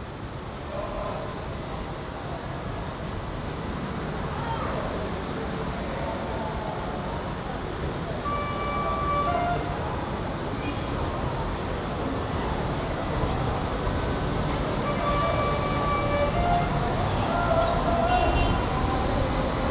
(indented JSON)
{
  "title": "Taichung, Taiwan - Train traveling through",
  "date": "2011-07-07 10:33:00",
  "latitude": "24.14",
  "longitude": "120.69",
  "altitude": "82",
  "timezone": "Asia/Taipei"
}